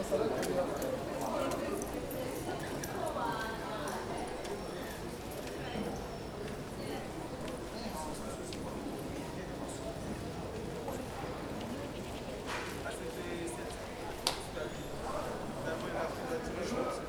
Rue de la République, Saint-Denis, France - Outside La Poste, Rue de la République
This recording is one of a series of recording, mapping the changing soundscape around St Denis (Recorded with the on-board microphones of a Tascam DR-40).
25 May 2019